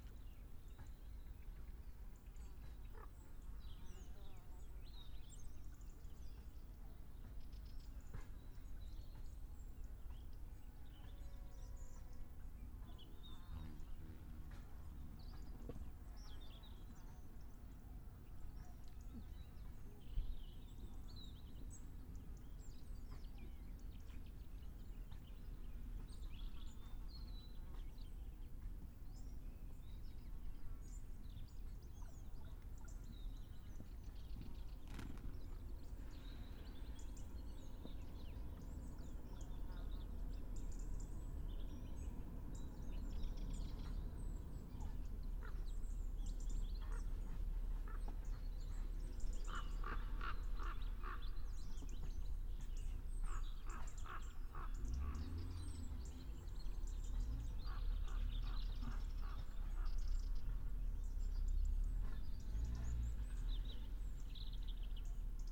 Lachania, Griechenland - Lahania, Rhodos, lookout
On the lookout on the western side of the village overlooking Lahania Valley. Afternoon. There has been some rain earlier. Birds.Nuts falling down from an Eucalyptus tree. People coming home from work. Binaural recording. Artificial head microphone facing west.Recorded with a Sound Devices 702 field recorder and a modified Crown - SASS setup incorporating two Sennheiser mkh 20 microphones.
Περιφέρεια Νοτίου Αιγαίου, Αποκεντρωμένη Διοίκηση Αιγαίου, Ελλάς, 27 October 2021